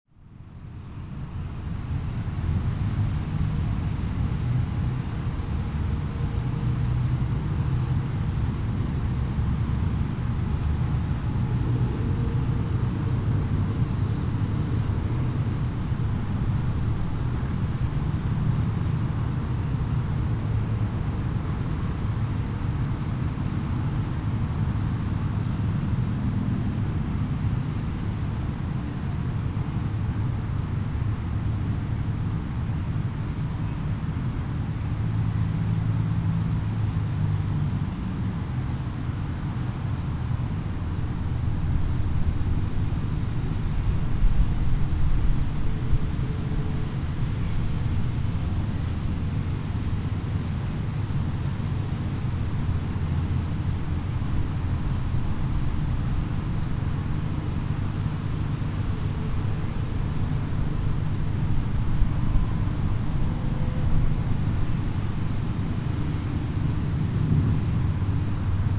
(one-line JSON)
{"title": "Mallory Ave, Milwaukee, WI - Summer day on porch in Milwaukee", "date": "2010-08-21 15:55:00", "description": "On our front porch on a summer day. Rode M3 pair -> Behringer Xenix 802 -> Olympus LS-10.", "latitude": "42.95", "longitude": "-87.94", "altitude": "232", "timezone": "America/Chicago"}